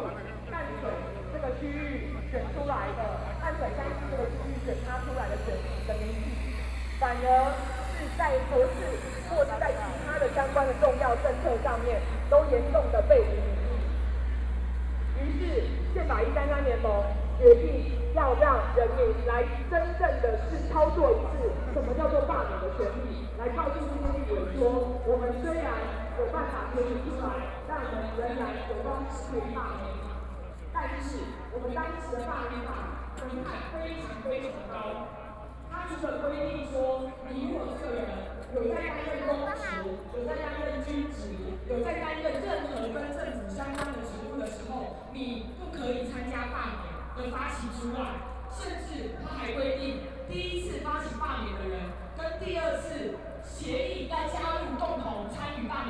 Jinan Rd., Zhongzheng Dist. - Student activism
Walking through the site in protest, People and students occupied the Legislature Yuan